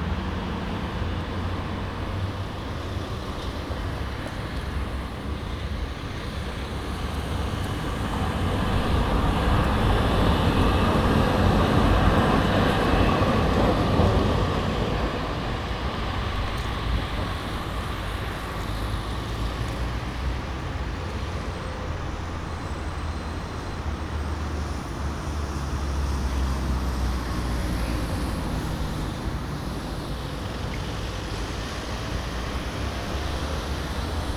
Den Haag, Kneuterdijk, Den Haag, Nederland - Kneuterdijk
Binaural recording.
General atmosphere on the Kneuterdijk in The Hagues.
Zuid-Holland, Nederland, 4 April 2014